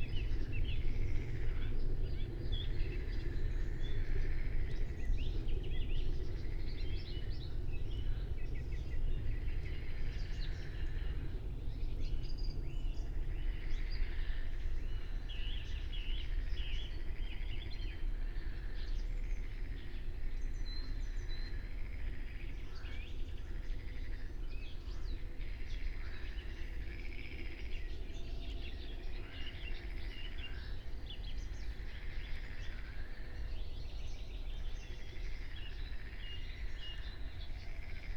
03:30 Berlin, Wuhletal - Wuhleteich, wetland
Deutschland, June 2021